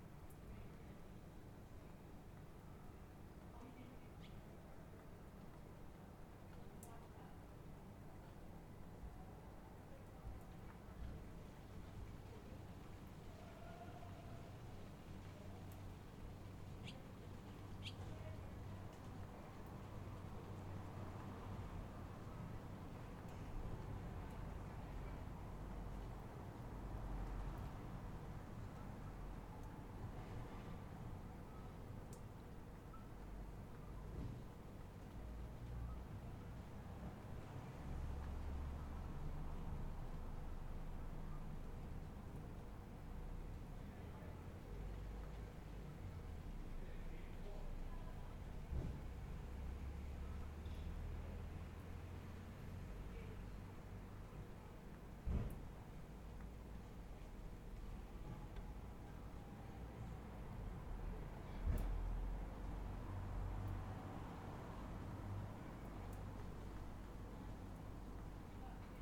Skibbereen - Skibbereen after heavy rain
A night time recording from the back window of my apartment after a heavy rainfall. Birds, cars, drainpipes, sounds from houses and drunken people all mixing together.
Recorded onto a Zoom H5 with an Audio Technica AT2022 resting on a windowsill.
Munster, Ireland, 26 July 2020, 00:10